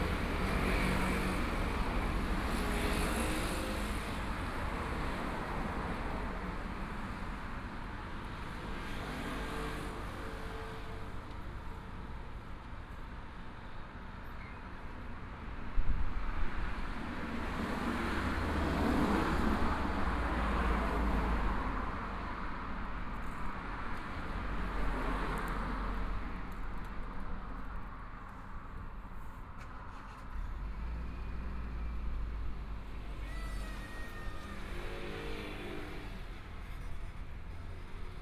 South-Link Highway, Taiwan - Traffic Sound
Traffic Sound, In front of the convenience store, Binaural recordings, Zoom H4n+ Soundman OKM II ( SoundMap20140117- 2)